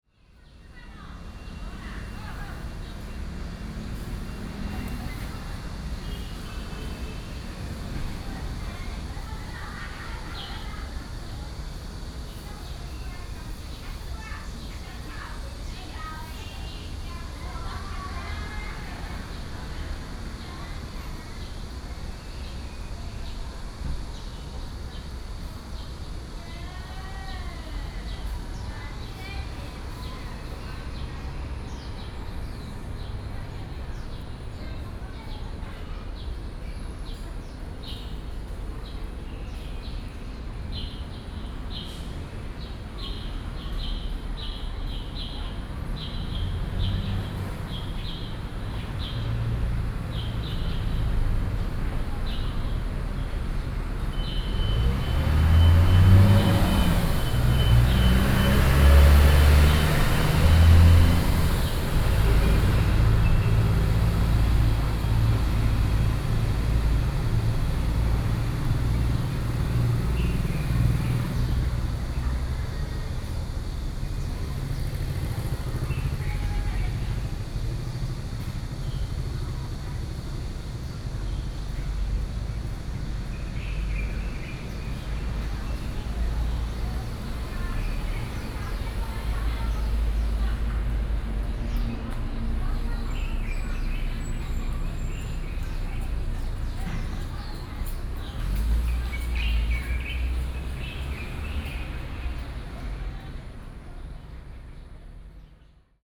Zhongxiao St., Yingge Dist. - small Park
small Park, Bird calls, Traffic Sound
Binaural recordings
Sony PCM D50 + Soundman OKM II
Yingge District, New Taipei City, Taiwan, 20 June 2012